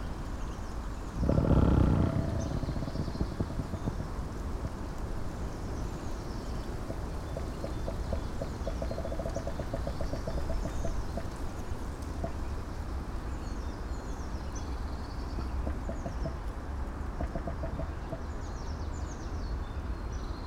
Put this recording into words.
strong wind, beautiful creaking